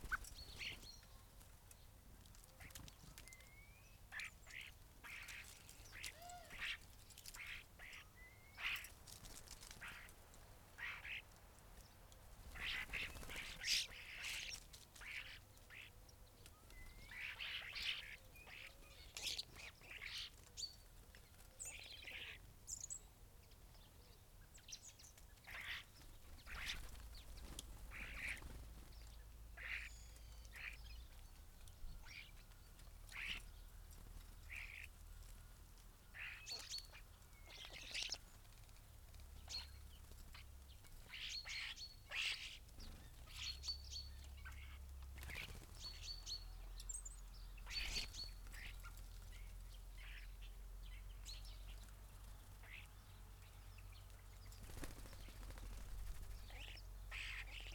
Luttons, UK - starlings on bird feeders ...
starlings on bird feeders ... open lavalier mic clipped to bush ... recorded in mono ... calls from collared dove ... blackbird ... dunnock ... greenfinch ... some background noise ...
31 December 2016, 08:30